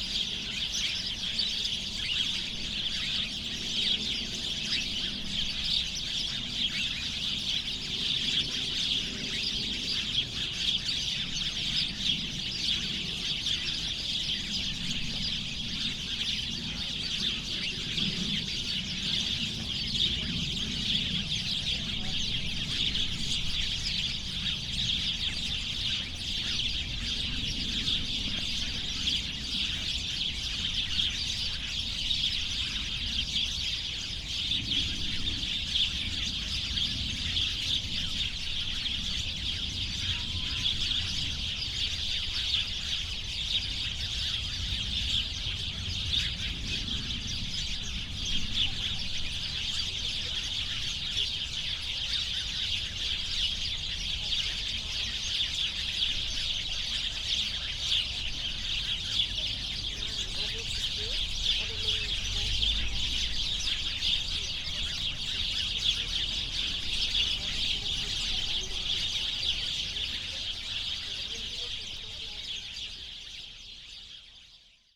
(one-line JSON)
{"title": "Tempelhofer Feld, Berlin, Deutschland - chatty sparrows in a bush", "date": "2015-02-01 14:55:00", "description": "these sparrows could be heard from quite afar, it must have been hundreds of them.\n(SD702, AT BP4025)", "latitude": "52.48", "longitude": "13.42", "altitude": "53", "timezone": "Europe/Berlin"}